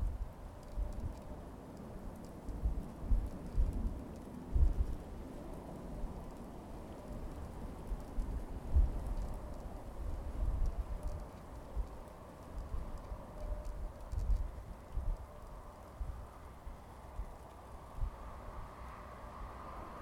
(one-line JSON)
{"title": "Portway Pillbox, Laverstock, UK - 011 Tree in the wind", "date": "2017-01-11 13:29:00", "latitude": "51.11", "longitude": "-1.77", "altitude": "83", "timezone": "GMT+1"}